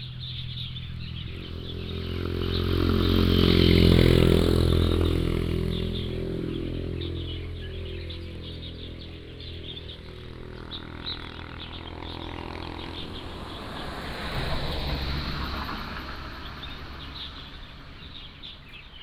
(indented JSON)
{
  "title": "北竿大道, Beigan Township - Birdsong",
  "date": "2014-10-13 12:08:00",
  "description": "Birdsong, Traffic Sound",
  "latitude": "26.22",
  "longitude": "119.99",
  "altitude": "79",
  "timezone": "Asia/Taipei"
}